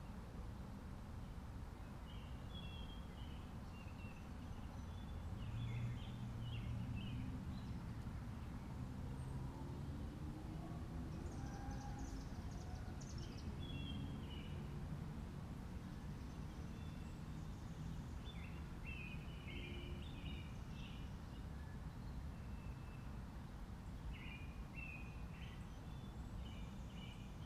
Glendale Cemetery South
Recorded on Mother's Day, Sunday, May 14, 2017. It was windy, and the sound was edited lightly to remove exceptionally loud wind noise. The sound was recorded using a Zoom Q3HD Handy Video Recorder and Flip mini tripod. The tripod was set on the ground. I sat under the overhang of one of the cemetery's many decorative crypts.